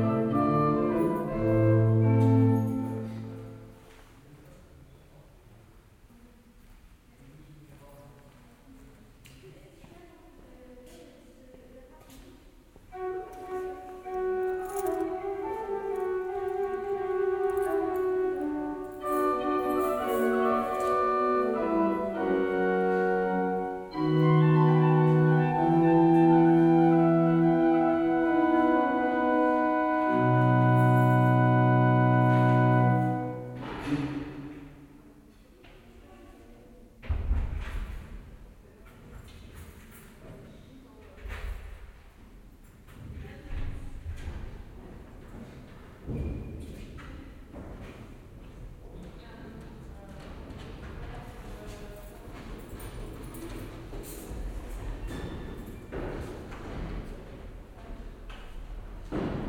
stade, st.cosmae kirche - barockorgel, september 2010 (binaural recording)